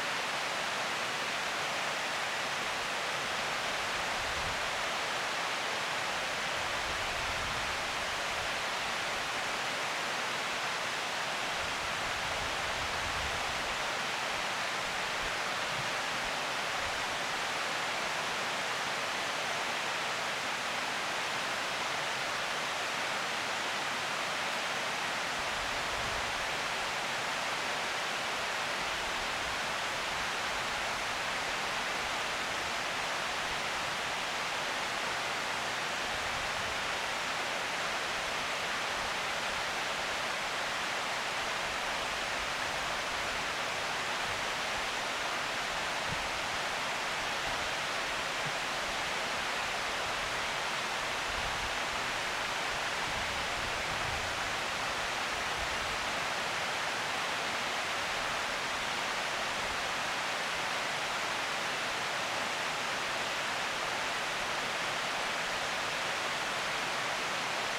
Towson, MD, USA - Over the Water
Boom pole held out over the waterfall of the dam at Lake Rowland.
28 November 2016, 13:59